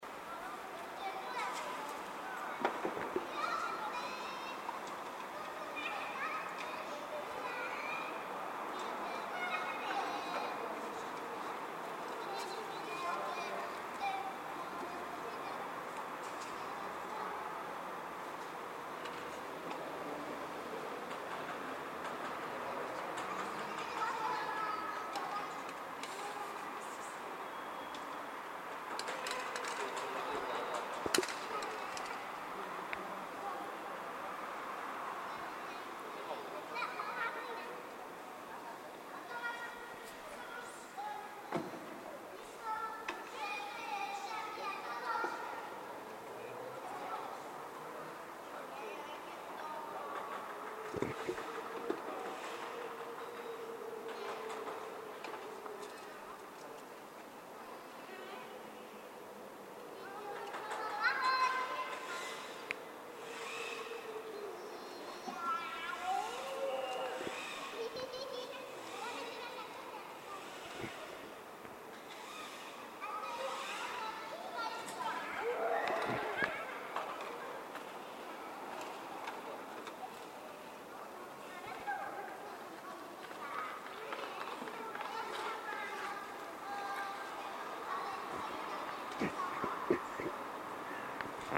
Staré Mesto, Slovenská republika - let the children play!
children's playground in the inner yard